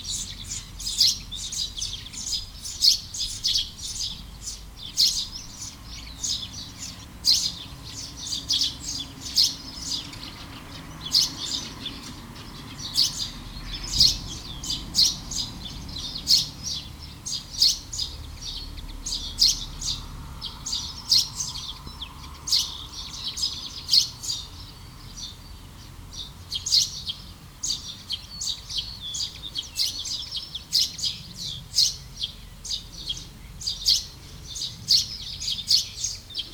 Neufchâteau, Belgique - Sparrows
A small village on the morning. Scoundrels sparrows singing and quietly, people waking up in the neighborhood.
Neufchâteau, Belgium, 9 June 2018, 08:05